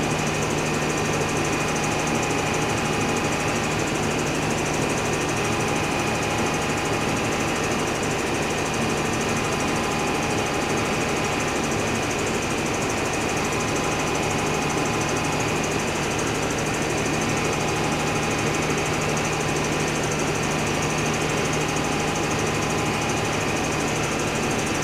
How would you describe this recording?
This is the biggest dump of Belgium. Here, a big noisy engine is turning.